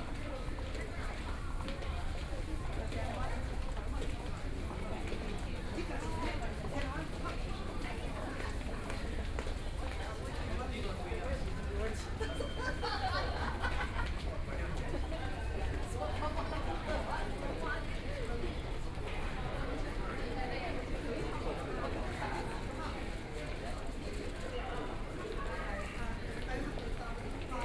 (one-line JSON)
{"title": "South Nanjin Road Station, Shanghai - into the Station", "date": "2013-12-02 12:58:00", "description": "walking in the Station, Binaural recordings, Zoom H6+ Soundman OKM II", "latitude": "31.24", "longitude": "121.48", "altitude": "9", "timezone": "Asia/Shanghai"}